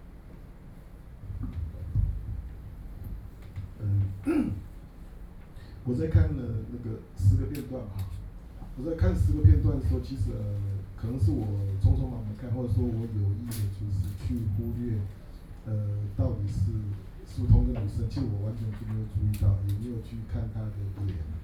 Nanhai Gallery - Young artists forum
Young artists forum, With curator and art critic and teacher Talk, Sony Pcm d50+ Soundman OKM II